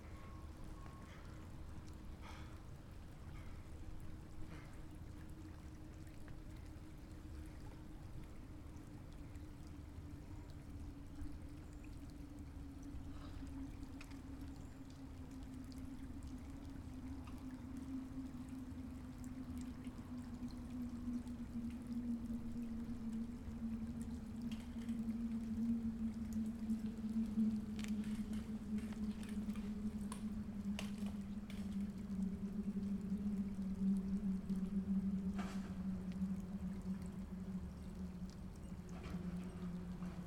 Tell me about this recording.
I recorded it next to Boulder Creek Path.